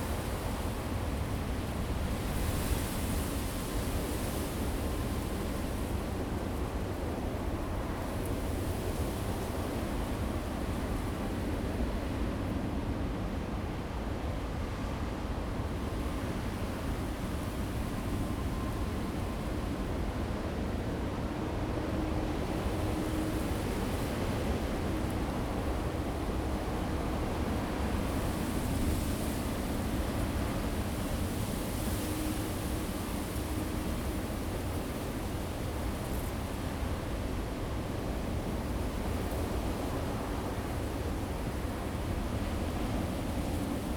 三間村, Changbin Township - sound of the waves
sound of the waves, Great wind and waves
Zoom H2n MS+XY